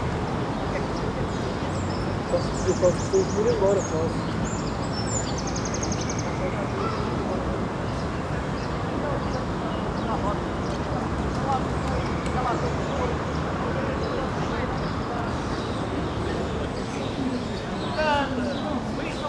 Jardim da Estrela, Lisbon, Portugal - Jardim da Estrela
Jardim da estrela ambience, brids, people